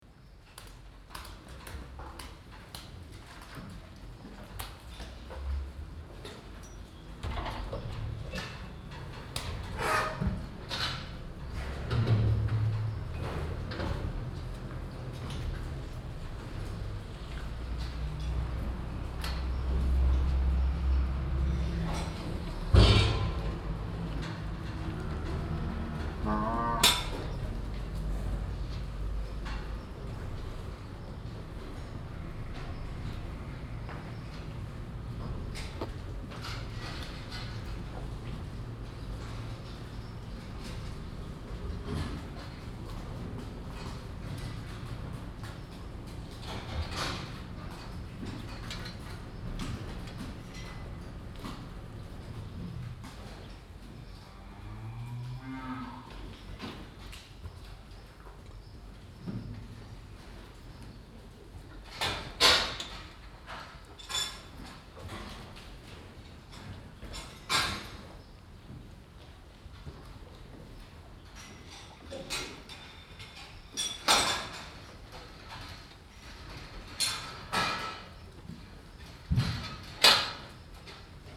Bastendorf, Tandel, Luxemburg - Bastendorf, cowshed
In einem größeren Kuhstall. Die Klänge der Kühe, die sich in ihren Boxen bewegen ihr Muhen und das metallische Rasseln der Verschläge. Gegen Ende das läuten der Kirchglocke.
Inside a bigger cowshed. The sounds of cows moving inside their boxes, the rattling of the metal dens and their mooing. At the end the bell from the church.